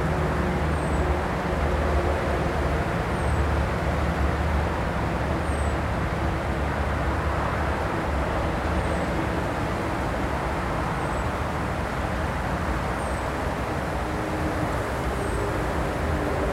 highway, birds, wind in trees, river
metro, nature, car, truck, ambulance